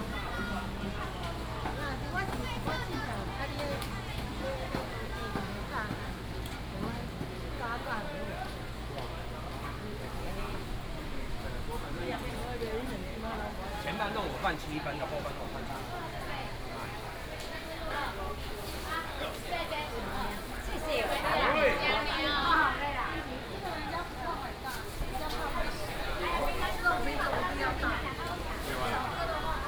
南崁菜市場, Luzhu Dist. - Walking in the traditional market
Walking in the traditional market, traffic sound
Taoyuan City, Taiwan, 27 July 2017, 08:18